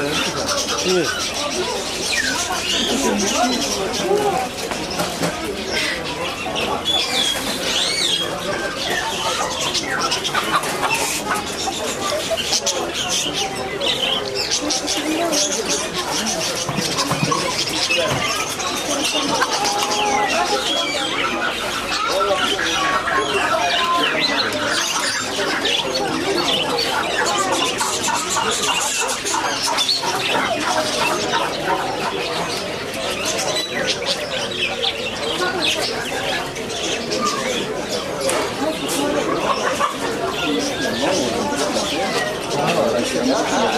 Epitomizing the citys density are these birds, squeezed into cages. There are chicken, chicks, geese, pidgeons, parakeets and partridges, not for ornithological pleasure alone...